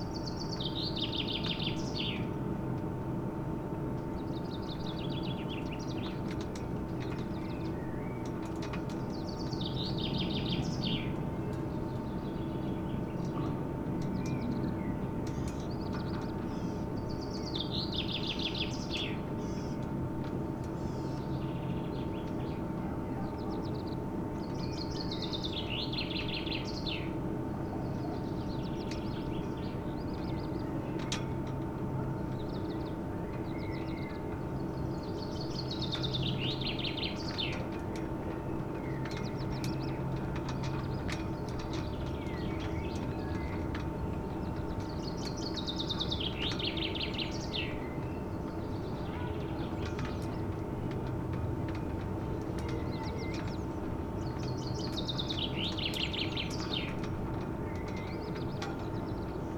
burg/wupper: schlossplatz - the city, the country & me: noise of chairlift motor and wind-whipped ropes of flagstaffs
fresh spring day, noise of chairlift motor, wind-whipped ropes of flagstaffs, singing birds, tourists
the city, the country & me: may 6, 2011